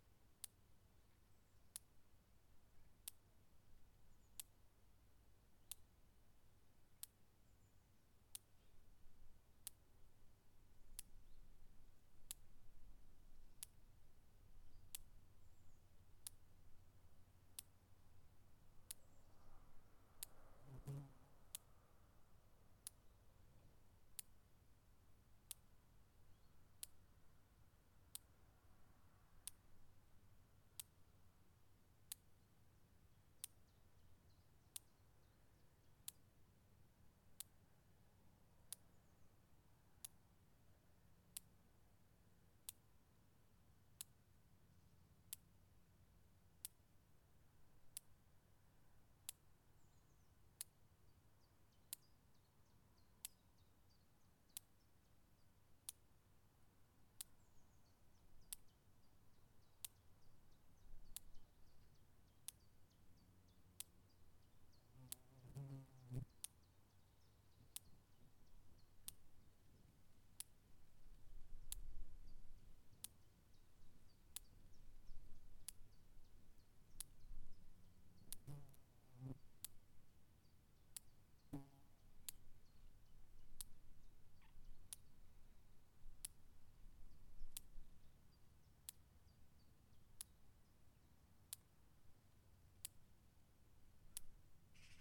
Folkhögskolevägen, Nyland, Sverige - Electrical fence
Electrical fence and insects and birds.
Norrland, Sverige, 5 September 2020, ~15:00